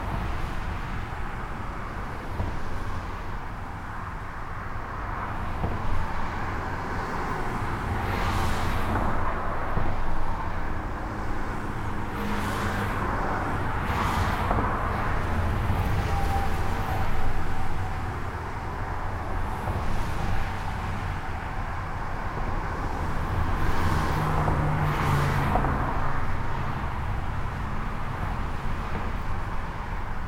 A dense trafic on the local highway, called N25.